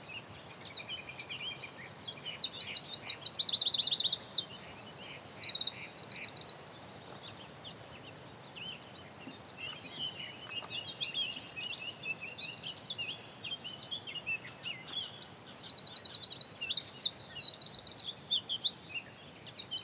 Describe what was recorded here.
Grange is the largest Neolithic stone circle in Ireland. This recording is on the eastern side of the circle with the microphone facing east. The recording was made under a tree and the loudest bird was sitting above us.